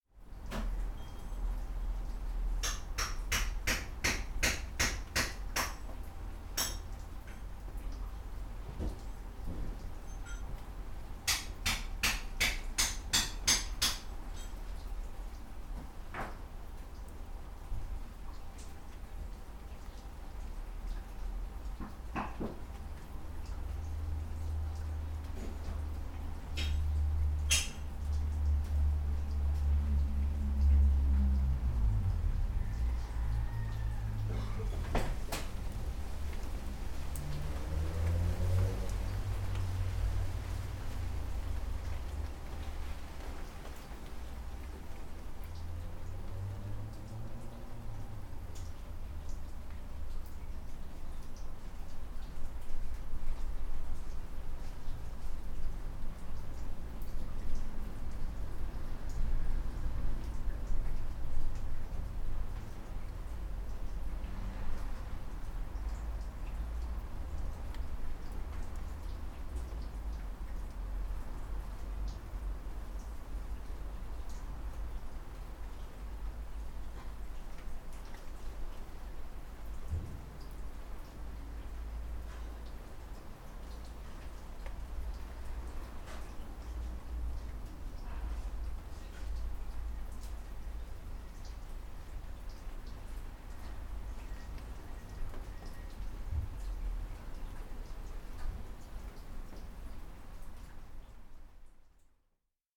white stones cafe - White Stones Cafe Sculpture Garden stone wall maintenance
After sitting in White Stones Cafe for a bit, checking out the oil paintings, thinking about Joe Stevens, drinking a huge latte, I went outside to investigate the sculpture garden. This is a wondrous space full of randomised plants and sculptures, with many nice places to sit, I imagine, when it's not lashing with rain. A man was repairing a stone wall, you can hear a bit of that here.